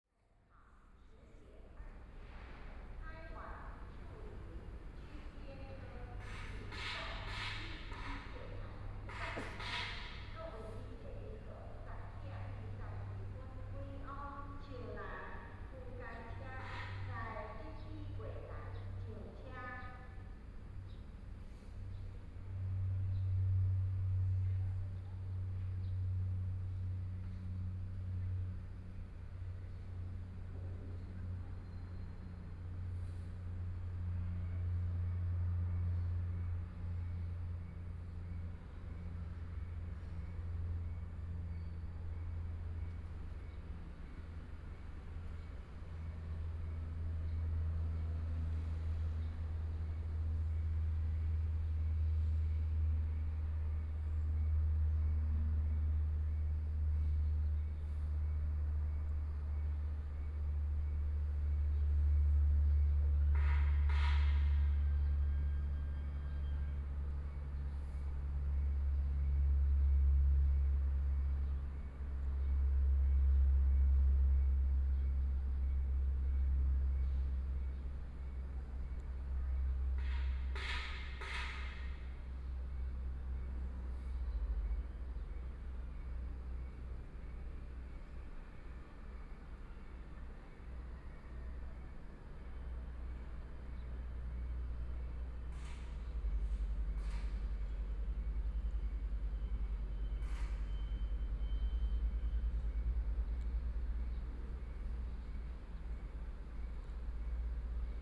Dongshan Station, Yilan County - In the station platform
In the station platform, Environmental sounds of the station, Station broadcast messages, Train arrived, Binaural recordings, Zoom H4n+ Soundman OKM II
8 November 2013, 10:27